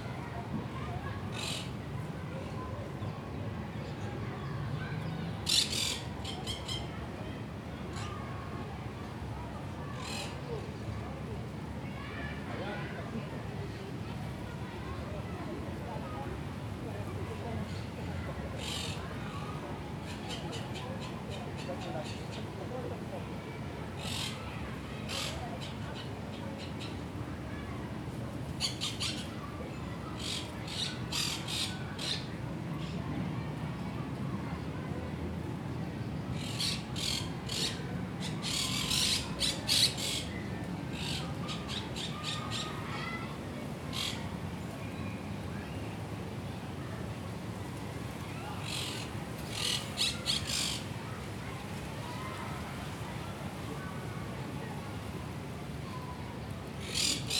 Ciutadella Park, Passeig de Picasso, Barcelona, Barcelona, Spain - Birds by the lake
Very loud colourful parakeets in the palm trees next to the lake. In the background you can hear children playing in the park, and occasionally bangs from the boats tied up in the lake.